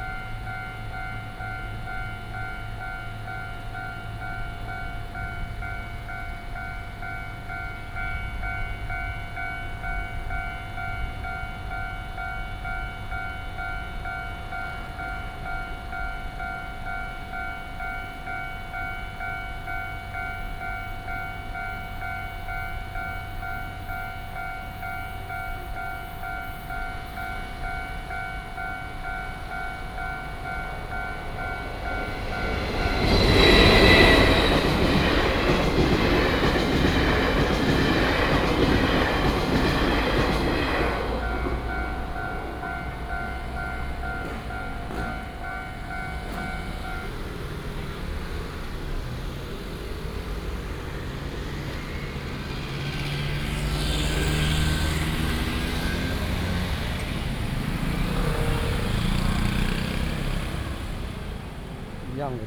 礁溪鄉大義村, Yilan County - Beside the railway crossing
Beside the railway crossing, A train traveling through, Very hot weather, Traffic Sound
Jiaoxi Township, 礁溪農會